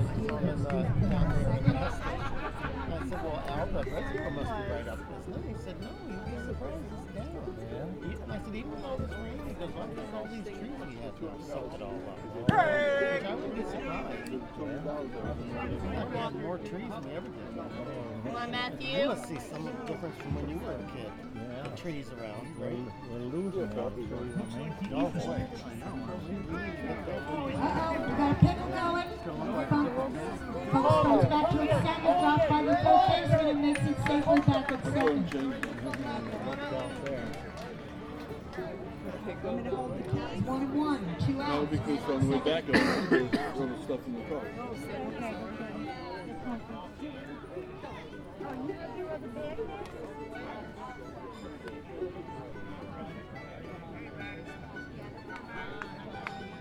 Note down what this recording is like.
behind the baseball backstop, in the bleachers, classic baseball, no gloves, hand-turned bats, and a casual atmosphere...